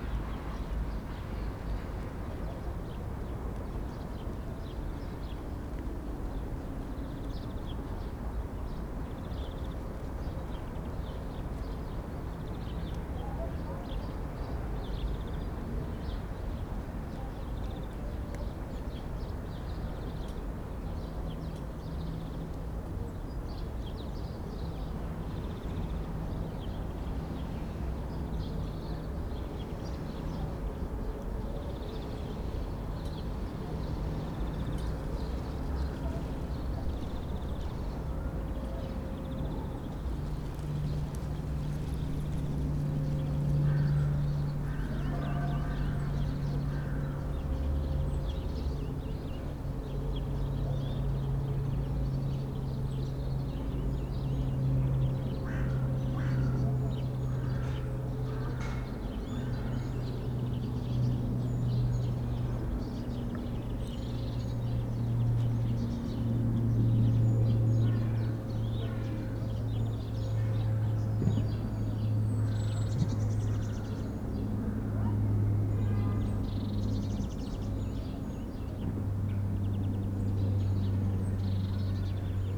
dry leaves of a bush in the wind, creaking fence, crows
the city, the country & me: march 18, 2013
Berlin, Germany, 18 March 2013, 14:07